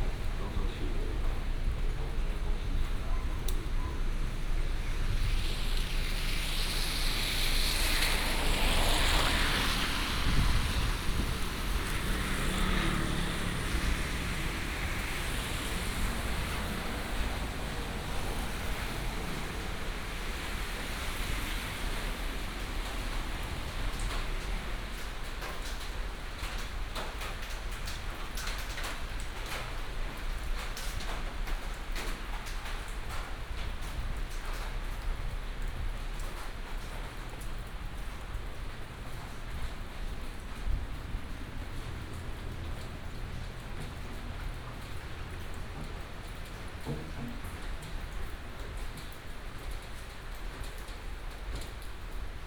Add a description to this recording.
Walking in the alley, Old shopping street, Traffic sound, raindrop sound